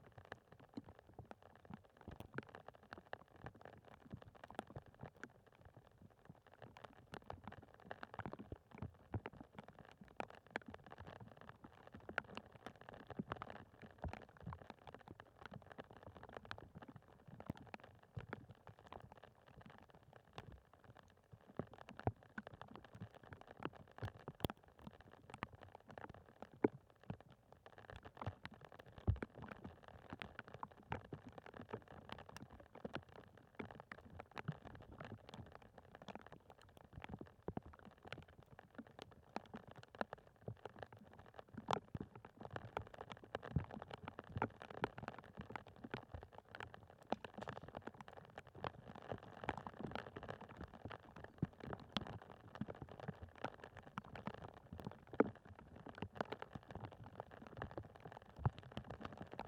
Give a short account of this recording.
Rainy, windy day. Some ice sheets left in flooded meadow. contact microphones on ice.